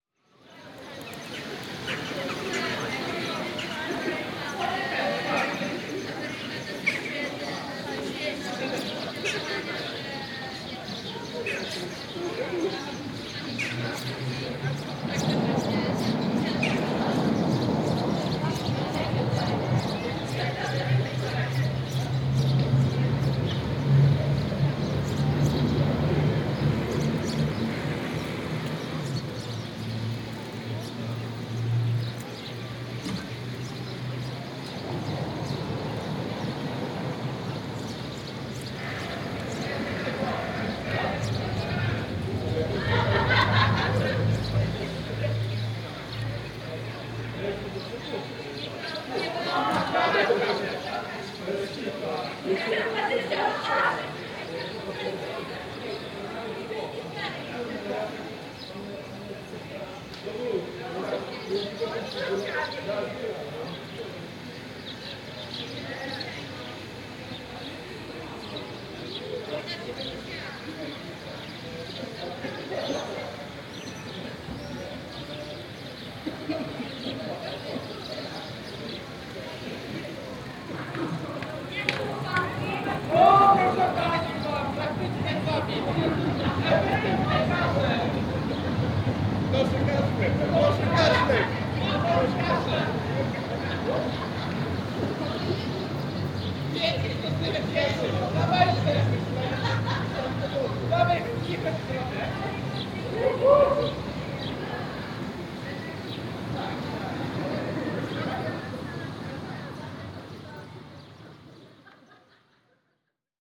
Rynek Kościuszki, Białystok, Poland - (128 BI) Rynek Kościuszki
Binaural recording of a square atmosphere during late May.
Recorded with Soundman OKM on Sony PCM D100
województwo podlaskie, Polska, 29 May 2021, 15:59